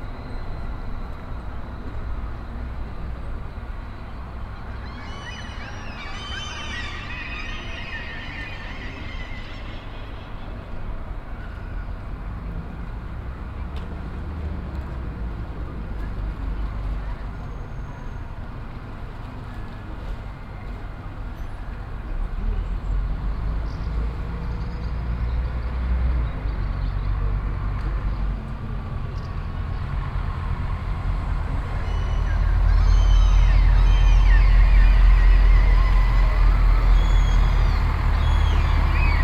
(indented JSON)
{
  "title": "Holstenbrücke, Kiel, Deutschland - Sunday in Kiel (binaural recording)",
  "date": "2021-05-30 13:39:00",
  "description": "Quiet sunday in Kiel around noon. Gulls always looking for a snack to steal from people in a near cafe, pedestrians, some traffic, distant 1:45 PM chimes of the town hall clock. Sony PCM-A10 recorder with Soundman OKM II Klassik microphone and furry windjammer.",
  "latitude": "54.32",
  "longitude": "10.14",
  "altitude": "5",
  "timezone": "Europe/Berlin"
}